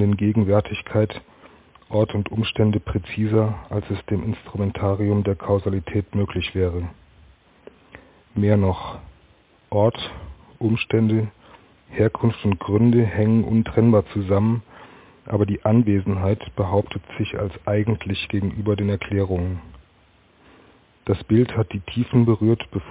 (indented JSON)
{
  "title": "altenberg: altenberger dom",
  "description": "altenberger dom, Altenberg, Hzgt.",
  "latitude": "51.05",
  "longitude": "7.13",
  "altitude": "103",
  "timezone": "GMT+1"
}